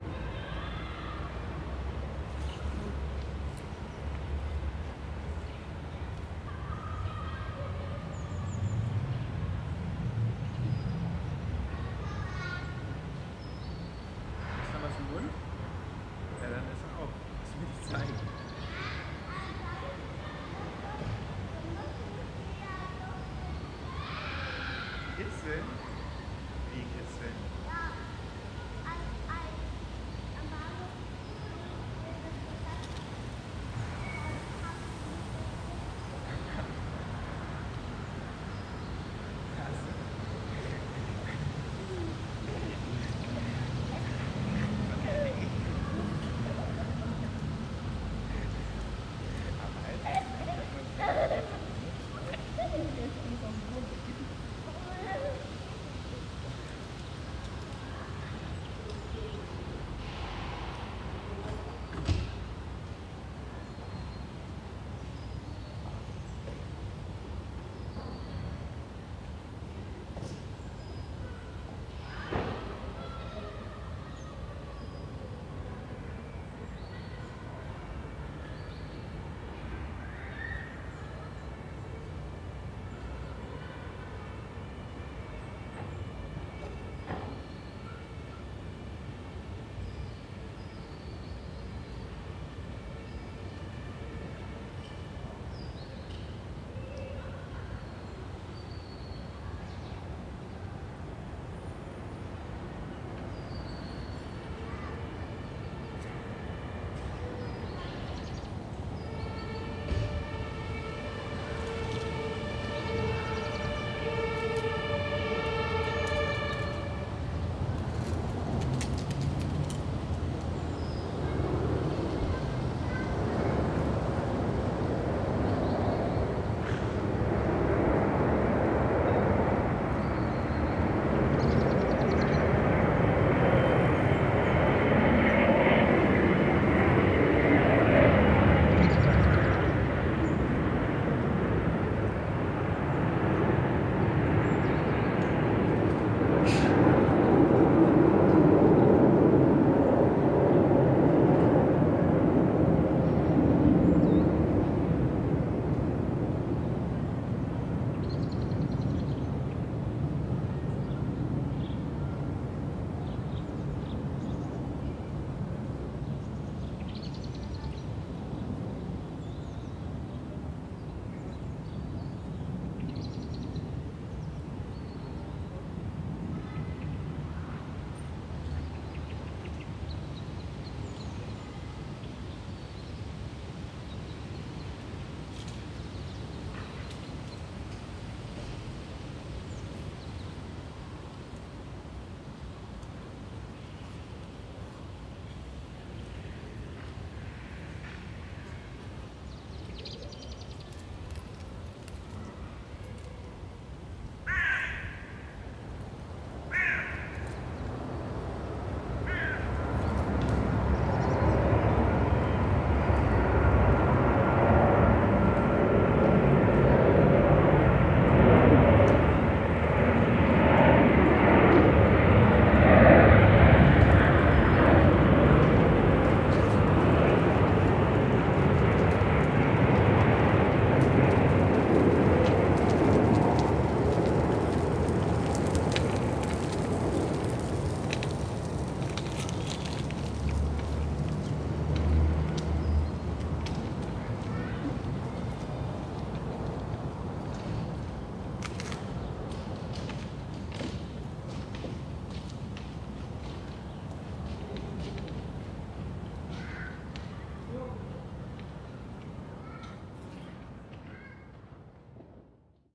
Wollankstraße, Berlin, Deutschland - Wollankstraße, Berlin - backyard between garages, children shouting, passers-by, siren, airplane, flies
Wollankstraße, Berlin - Backyard between garages, children shouting, passers-by, siren, airplane, flies.
[I used the Hi-MD-recorder Sony MZ-NH900 with external microphone Beyerdynamic MCE 82]
Berlin, Germany, 13 October 2012, ~13:00